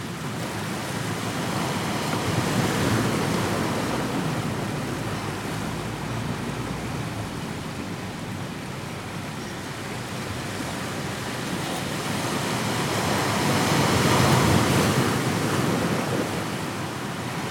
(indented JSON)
{
  "title": "Balmoral Beach - Balmoral Beach at Night",
  "date": "2014-11-01 21:30:00",
  "latitude": "-33.83",
  "longitude": "151.25",
  "altitude": "4",
  "timezone": "Australia/Sydney"
}